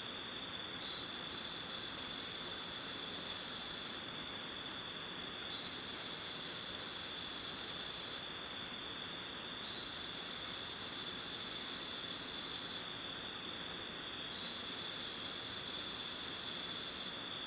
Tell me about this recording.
recorded at Iracambi, a NGO dedicated to protect and grow the Atlantic Forest